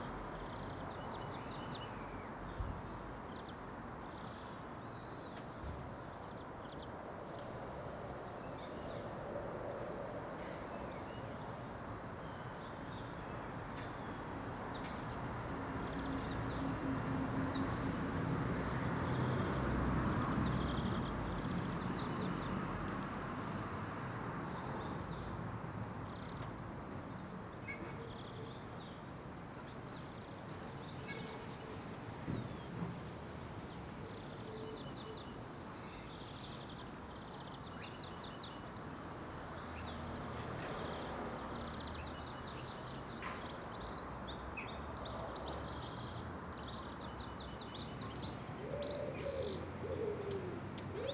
The Drive
Bright sunshine dazzles and
out of the wind
warms
Blue tits explore the nest box
that hangs in the elder
Bang thud tinkle
builders come and go
and windchimes